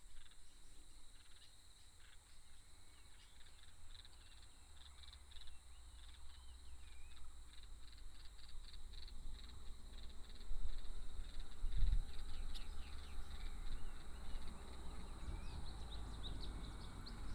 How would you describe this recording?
Beside the wetland, Traffic sound, Birds sound, There is construction sound in the distance, Frog croak, Dog barking